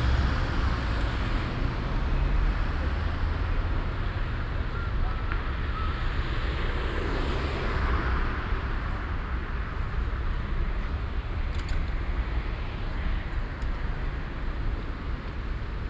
cologne, barbarossaplatz, verkehrsabfluss luxemburgerstrasse - koeln, barbarossaplatz, verkehrsabfluss luxemburgerstrasse 02
strassen- und bahnverkehr am stärksten befahrenen platz von köln - aufnahme: nachmittags
soundmap nrw: